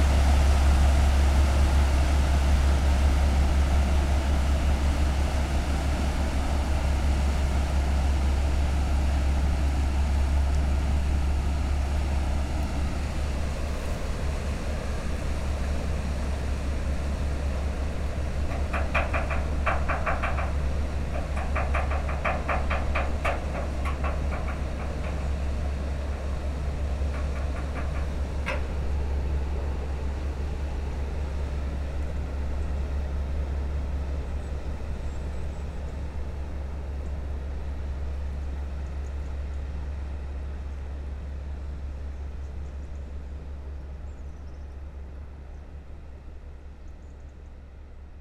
Boat going out the sluice. A very funny thing : the boat on the satelitte view is the one recorded ! You can recognize it with the colors, it's the Swiss Sapphire.